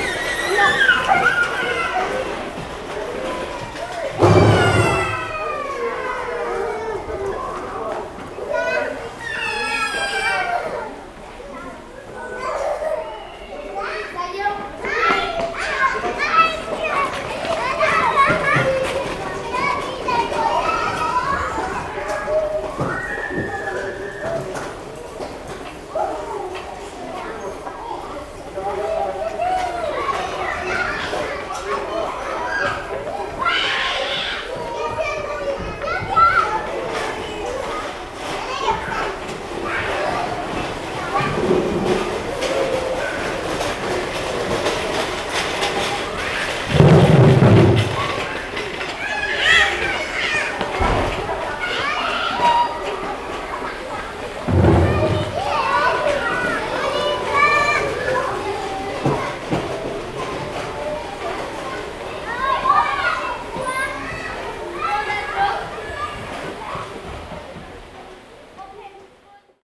Court-St.-Étienne, Belgique - Defalque school
Defalque school, young children are playing on the playground, waiting for their parents to come.
Court-St.-Étienne, Belgium, 18 September 2015, 15:50